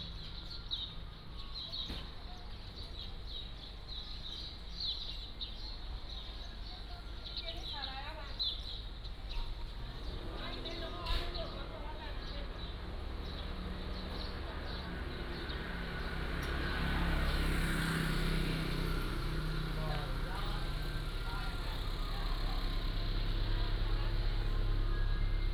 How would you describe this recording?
In the street, Birds singing, Traffic Sound, Market, Garbage truck